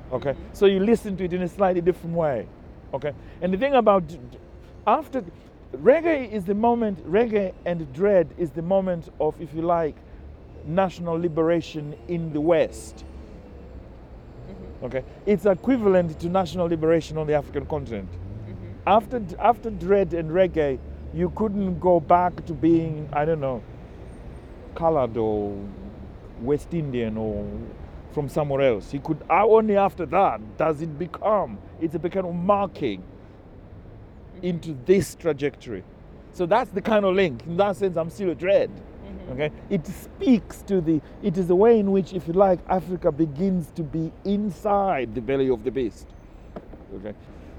Brixton Market, London Borough of Lambeth, London, UK - Dread in the belly of the beast…
We are walking down Coldharbour Lane and across Brixton Market with the writer George Shire. He takes photographs. I fixed a bin-aural mic on his shirt… capturing his descriptions, memories and thoughts… an audio-walk through Brixton and its histories, the up-rise of black culture in the UK…
the recording is part of the NO-GO-Zones audio radio project and its collection:
13 March